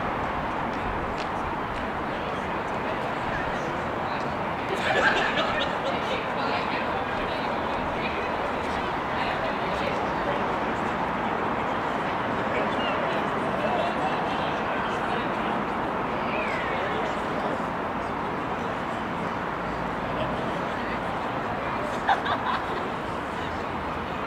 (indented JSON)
{
  "title": "Queens Square, Belfast, UK - Albert Memorial Clock",
  "date": "2022-03-27 18:13:00",
  "description": "Recording of distant bar chatter, vehicles passing, pedestrians walking, dogs walking, children talking, distant laughter, vehicle horn, bicycle passing, birds flying and squawking, random object noise.",
  "latitude": "54.60",
  "longitude": "-5.92",
  "altitude": "6",
  "timezone": "Europe/London"
}